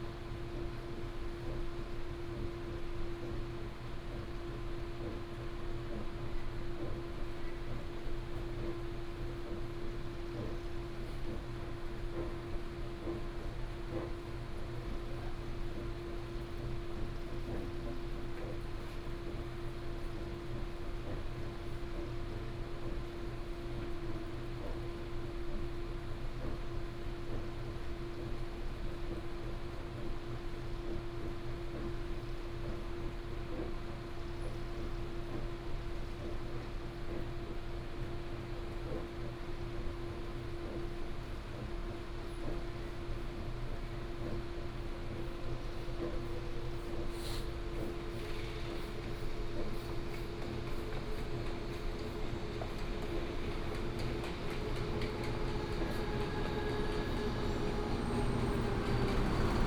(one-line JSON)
{"title": "Taipei Main Station, Taiwan - in the station platform", "date": "2017-03-01 06:45:00", "description": "in the station platform, The train travels", "latitude": "25.05", "longitude": "121.52", "altitude": "19", "timezone": "Asia/Taipei"}